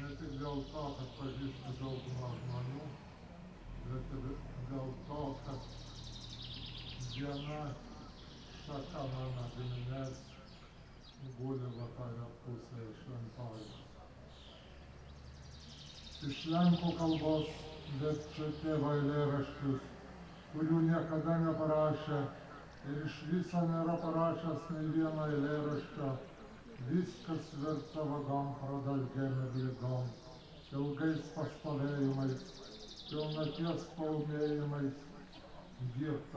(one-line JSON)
{"title": "Sudeikiai, Lithuania, poetry reading, birds", "date": "2012-06-09 11:00:00", "description": "the churchyard. international poetry festival.", "latitude": "55.58", "longitude": "25.68", "altitude": "149", "timezone": "Europe/Vilnius"}